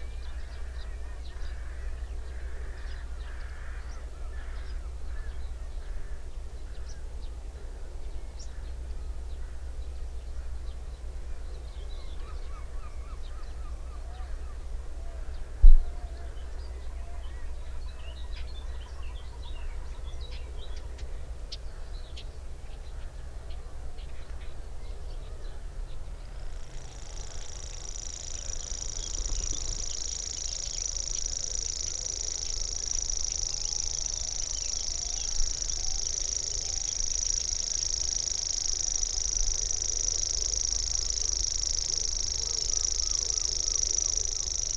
Cliff Ln, Bridlington, UK - grasshopper warbler ... in gannet territory ...
grasshopper warbler ... in gannet territory ... mics in a SASS ... bird calls ... songs from ... gannet ... kittiwake ... carrion crow ... curlew ... blackcap ... linnet ... whitethroat ... goldfinch ... tree sparrow ... wood pigeon ... herring gull ... some background noise ...
27 June 2018, ~07:00